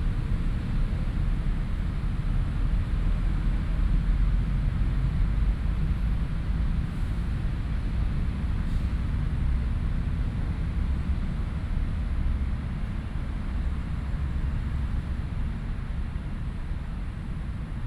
赤土崎公園, Hsinchu City - City Ambiences
Off hours, in the park, traffic sound, City Ambiences, Binaural recordings, Sony PCM D100+ Soundman OKM II